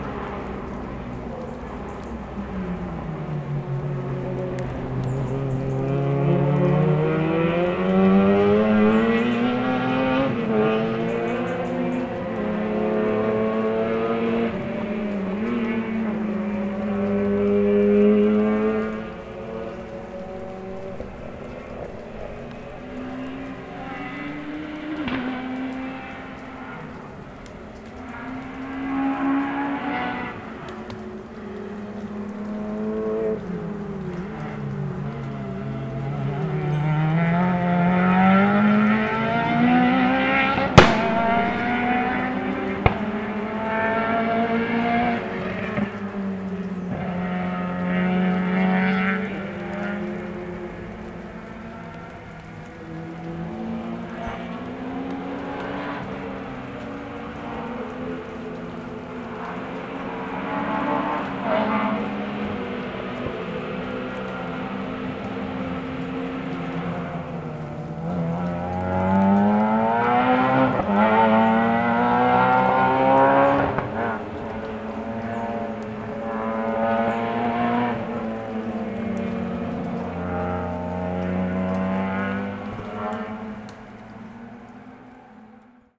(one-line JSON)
{"title": "Porcen di Pedavena BL, Italia - Rally in Pedavena", "date": "2019-10-19 15:00:00", "description": "XXXVII Pedavena - Croce d'Aune, Campionato Italiano Velocità Montagna (Rally).\nDeafening noise of cars on the pass and the delicate sound of rain.\nSony PCM-D100", "latitude": "46.05", "longitude": "11.87", "altitude": "505", "timezone": "Europe/Rome"}